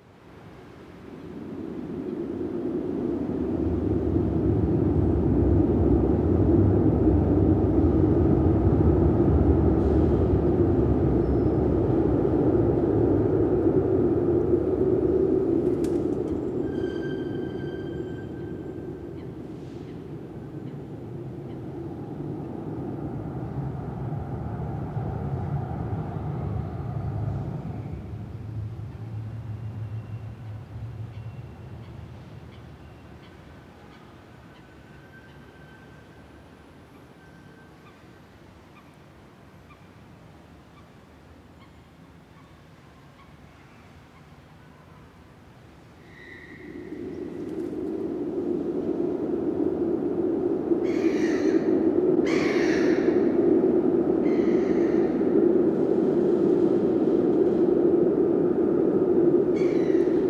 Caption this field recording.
Über uns rollen die Strassenbahnen. Eine Kinderschar kommt vorbei. 1987